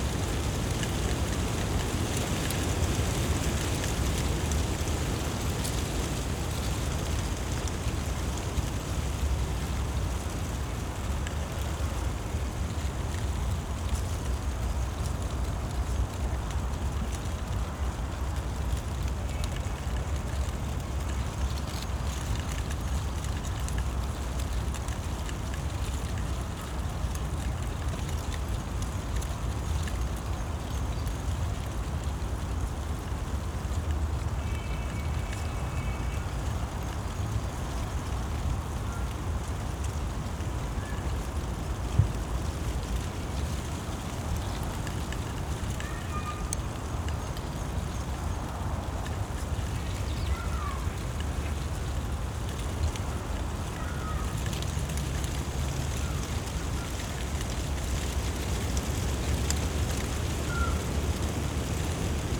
Tempelhofer Feld, Berlin, Deutschland - dry leaves in the wind, city hum
place revisited on a cold spring day, remains of snow all around, constant wind from the north amplifies the city hum, dry leaves and branches of poplar trees.
(SD702, Audio Technica BP4025)